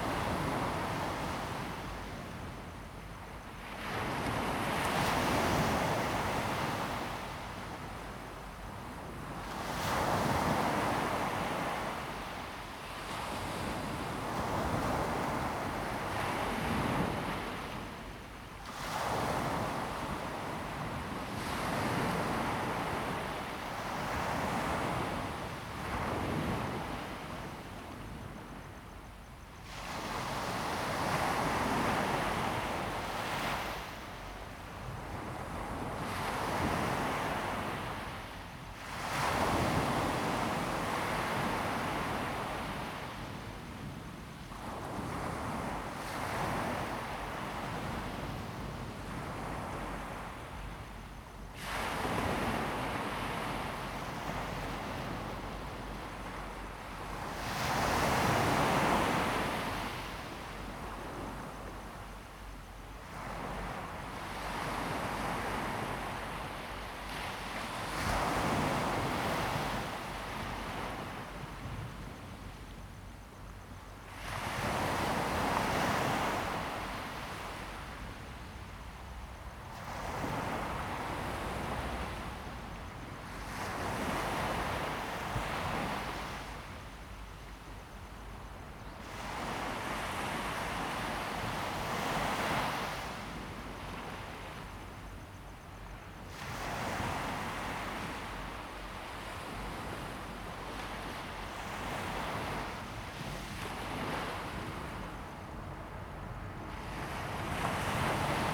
Fangshan Township, Pingtung County - Late night seaside
Late night seaside, traffic sound, Sound of the waves
Zoom H2n MS+XY
Pingtung County, Taiwan, 28 March 2018